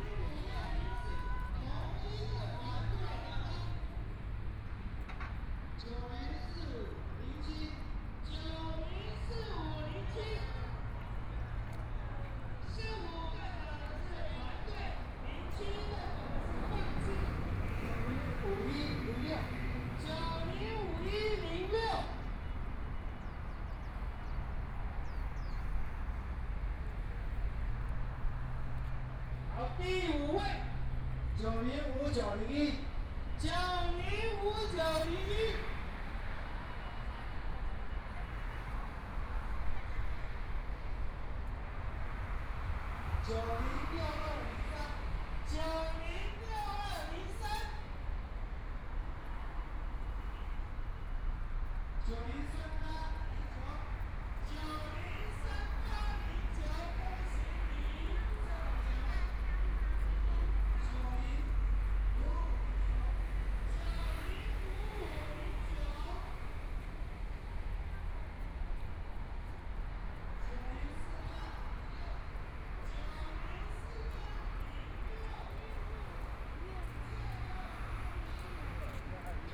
Walking on the road, Traffic Noise, Jogging game, Binaural recordings, ( Keep the volume slightly larger opening )Zoom H4n+ Soundman OKM II
Taipei City, Taiwan, 15 February